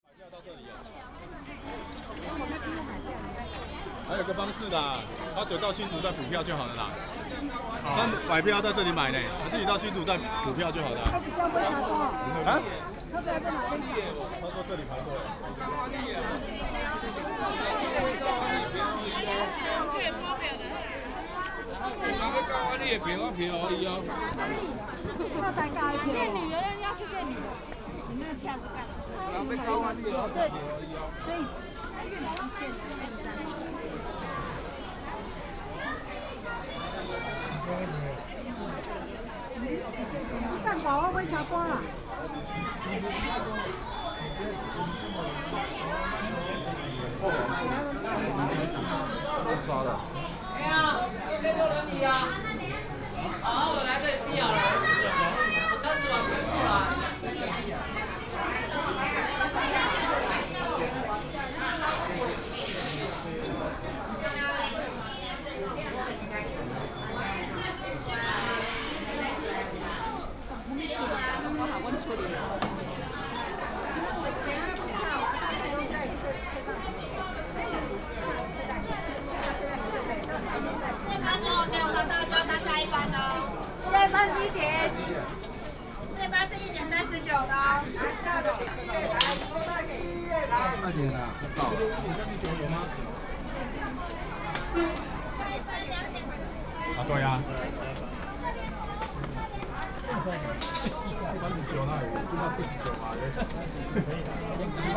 Baishatun Station, 苗栗縣通霄鎮 - walking into the Station
walking into the Station, Crowded crowd
Miaoli County, Tongxiao Township, 9 March, 13:46